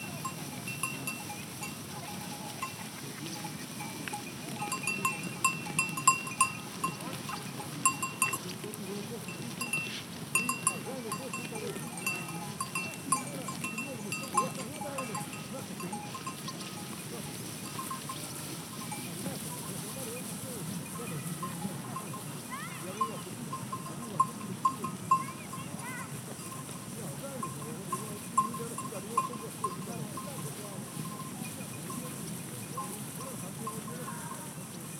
Platak, M. Pribenis, flock of sheep